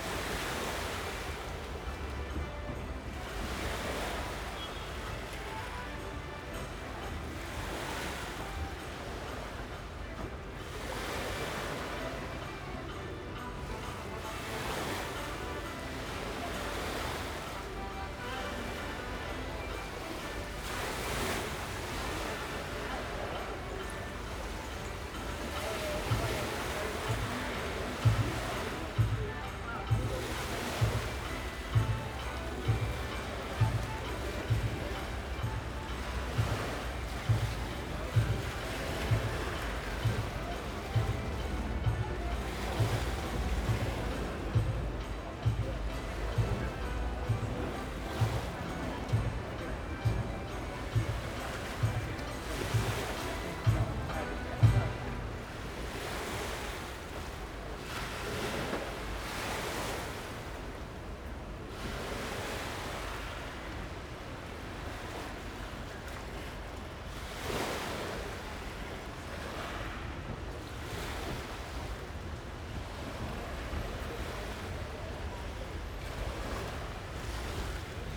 River waves and a folk musician, Blackfriars Bridge, Blackfriars Bridge, London, UK - River waves and a folk musician
On the river path one walks though short tunnels under bridges, beside river beaches, more tunnels and open spaces in very quick succession. All have a different soundscapes and an acoustic character that constantly change according to weather tides, time of day, season and people's activities. Here the waves slosh on a beach fast disappearing under the rising tide as a folk musician plays in the tunnel under the road. He taps the beat with his foot. There's a certain rhythmic similarity with the waves.
Greater London, England, United Kingdom, 16 May 2022, 13:11